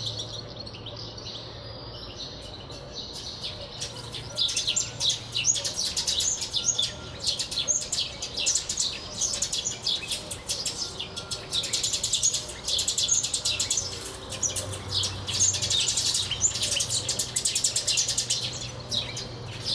вулиця Трудова, Костянтинівка, Донецька область, Украина - Майское утро
Утро в спальном районе: голоса ранних прохожих, щебет птиц и звуки автомобилей